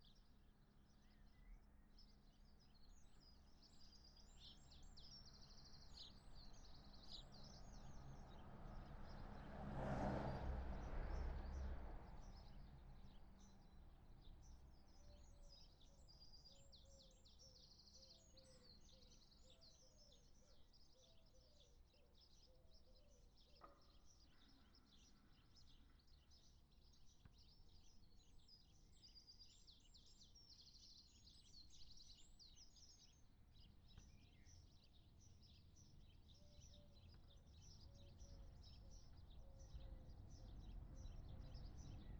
Unnamed Road, Malton, UK - down to the doctors ...
down to the doctors ... to get a prescription ... on the m'bike ... xlr sass on garage roof to zoom h5 ... always wanted to do this ... real time for there and back ...
16 June 2022